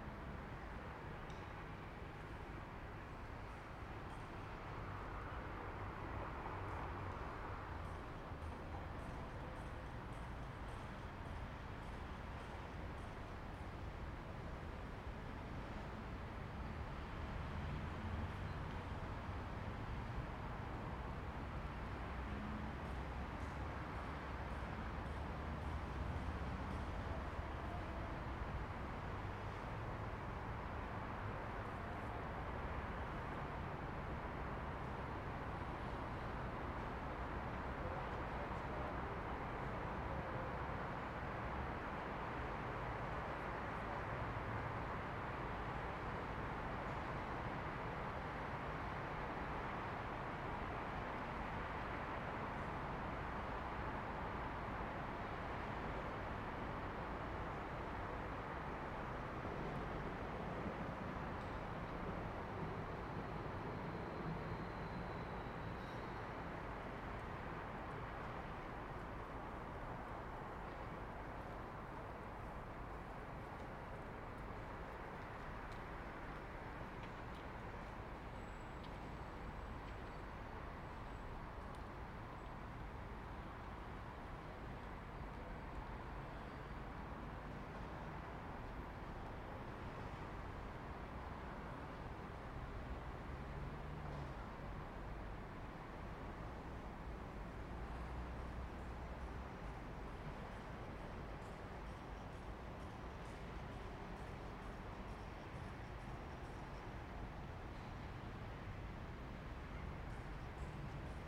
Śródmieście, Gdańsk, Polska - Bridge
City sounds recorded from a recently rebuilt bridge. Recorded with Zoom H2n.